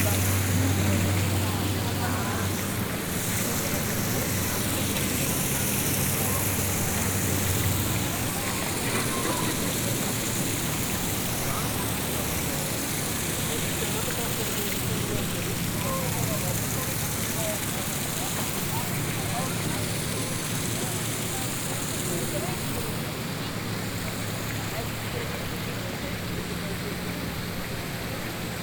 Kassel Königsplatz, walking passing the water fountains
Zoom H4 + OKM binaural mics